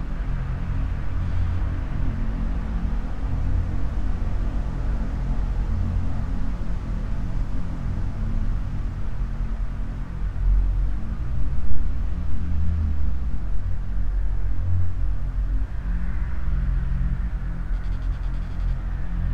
small omni mics in an empty tube..resonances of the near traffic.